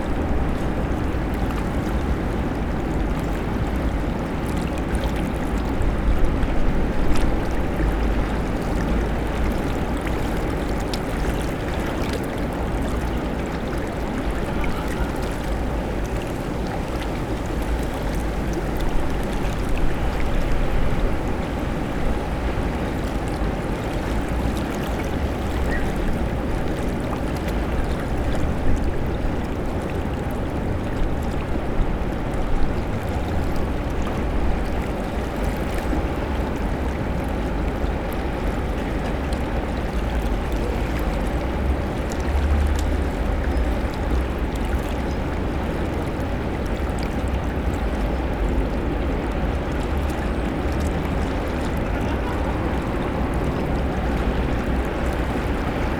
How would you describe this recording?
waltherpark, vogelweide, fm vogel, bird lab mapping waltherpark realities experiment III, soundscapes, wiese, parkfeelin, tyrol, austria, walther, park, vogel, weide, flussgeräusch, fluss, innufer, wellen, autos, motorrad anpruggen, st.